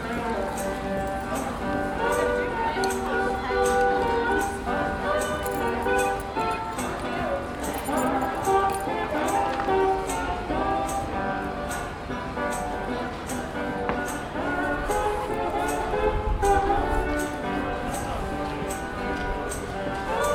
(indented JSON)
{
  "title": "High Street, Salisbury, UK - 054 Busker, passers-by",
  "date": "2017-02-24 13:01:00",
  "latitude": "51.07",
  "longitude": "-1.80",
  "altitude": "50",
  "timezone": "Europe/London"
}